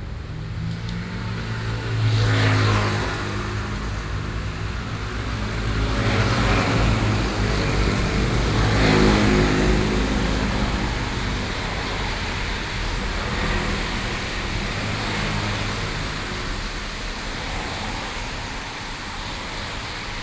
Beitou, Taipei - rainy day
Traffic noise, Sony PCM D50 + Soundman OKM II
北投區, 台北市 (Taipei City), 中華民國, 23 June 2013, 23:04